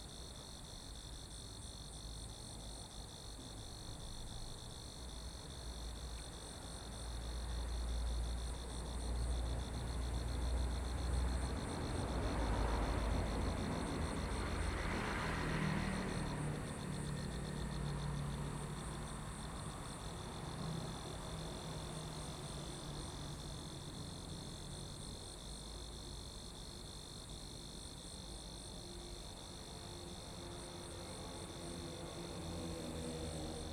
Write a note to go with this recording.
The insects in the bushes, traffic sound, The train runs through, Zoom H6